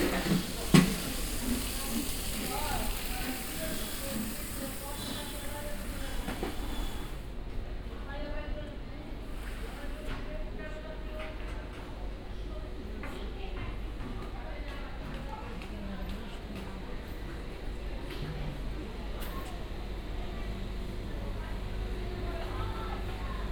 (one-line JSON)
{"title": "Porto, mercado do Bolhao - mercado do bolhao, closing time", "date": "2010-10-11 16:50:00", "description": "walk at mercado do bolhao, porto. closing time, cleanup, market is almost empty. (binaural, use headphones)", "latitude": "41.15", "longitude": "-8.61", "altitude": "90", "timezone": "Europe/Lisbon"}